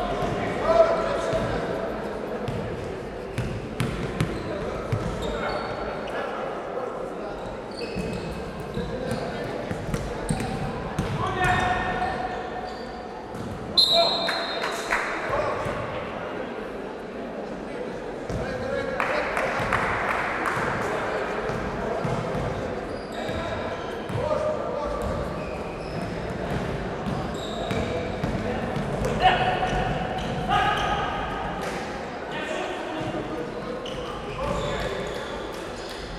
Lithuania, Utena, basketball in a new school sport hall
18 January 2011